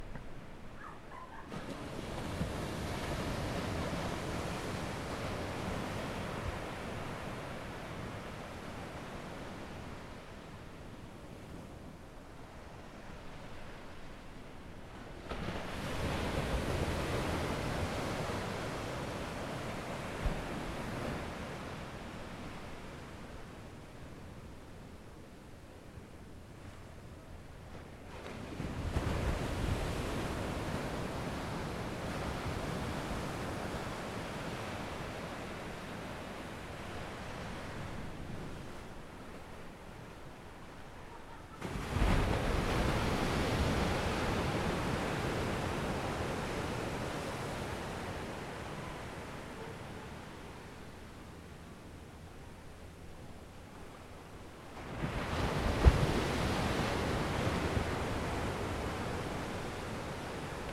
{"title": "Del Playa Dr, Goleta, CA, USA - Waves Crashing", "date": "2019-10-23 20:15:00", "description": "This recording was taken in my backyard at my house in Isla Vista. To record this sound I held the recorder high up off the cliff and over the ocean. This was recorded at 8:30 pm in order to hear the waves crash against the cliff, if you listen carefully you can even hear my roommates in our living room talking to each other. This represents the sound of a relaxing and \"chill\" night in IV listening to the waves with your housemates after a long day of school.", "latitude": "34.41", "longitude": "-119.86", "altitude": "9", "timezone": "America/Los_Angeles"}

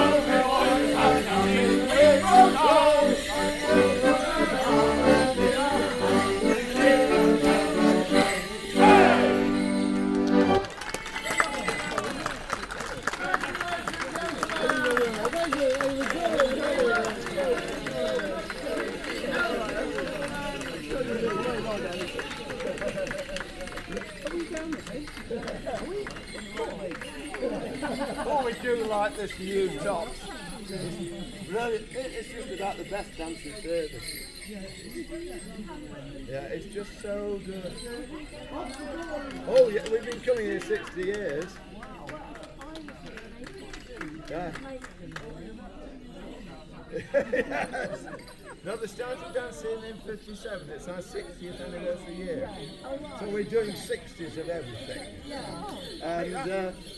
{"title": "Goring Heath Almshouses, Reading, UK - Kennet Morris Men performing a one man jig", "date": "2017-05-01 10:23:00", "description": "This is the sound of the Kennet Morris Men performing a one man jig at the Goring Heath Almshouses as part of their May Morning celebrations. This Morris side have been performing here for sixty years.", "latitude": "51.51", "longitude": "-1.05", "altitude": "123", "timezone": "Europe/London"}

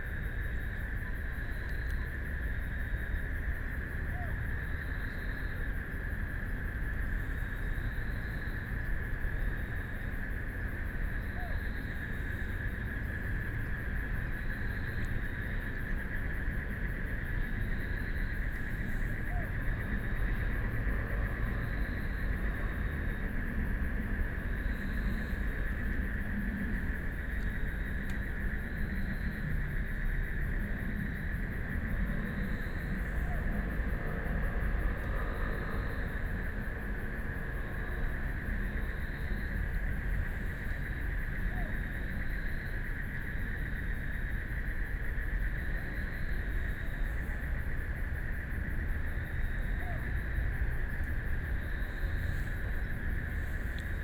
{"title": "碧湖公園, Neihu District - The park at night", "date": "2014-03-19 19:38:00", "description": "The park at night, Frogs sound, Traffic Sound\nBinaural recordings", "latitude": "25.08", "longitude": "121.58", "altitude": "24", "timezone": "Asia/Taipei"}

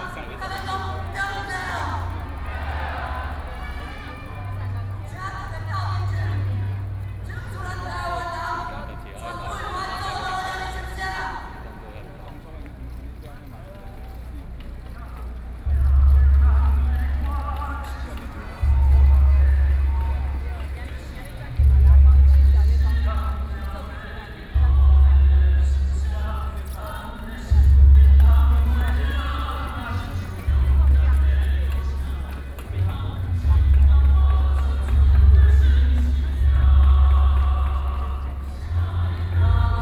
{"title": "Xinyi Road - Cries of protest", "date": "2013-08-03 21:01:00", "description": "Protest against the government, A noncommissioned officer's death, More than 200,000 people live events, Sony PCM D50 + Soundman OKM II", "latitude": "25.04", "longitude": "121.52", "altitude": "19", "timezone": "Asia/Taipei"}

